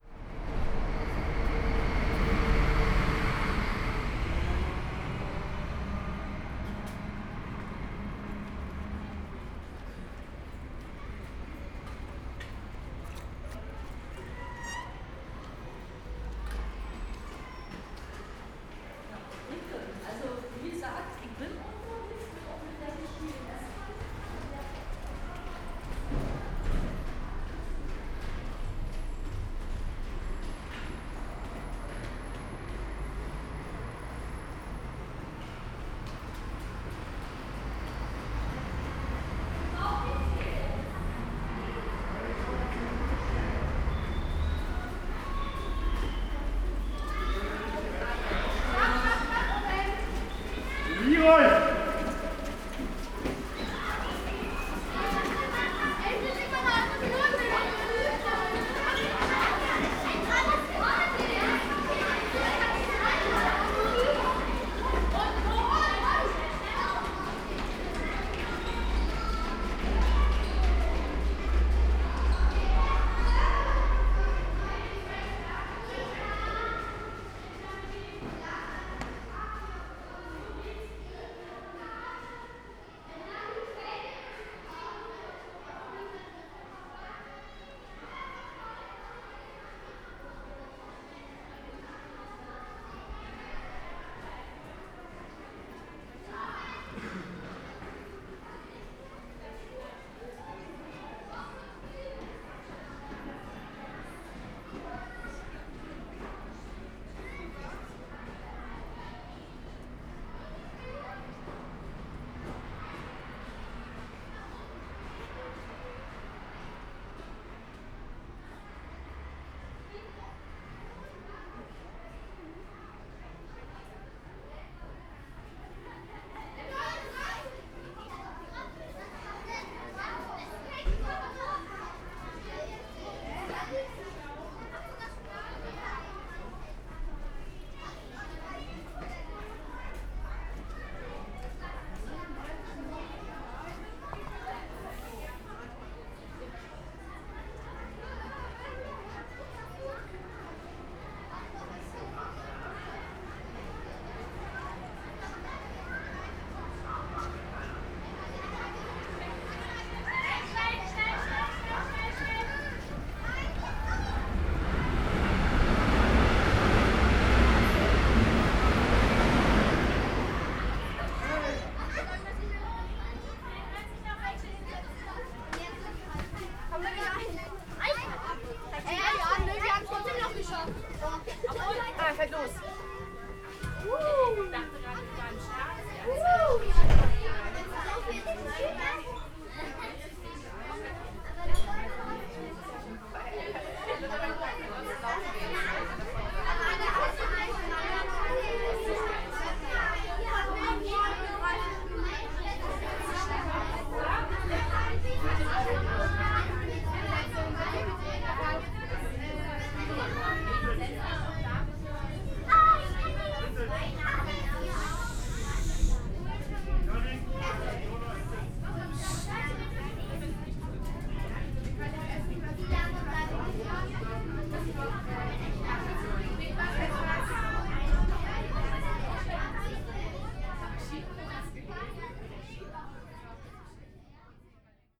S-Bahn Station, Berlin-Buch - station ambience, school class entering station
Berlin Buch S-Bahn station, school kids entering the station. Walk from street level up to the platform and into the train.
(Sony PCM D50, DPA4060)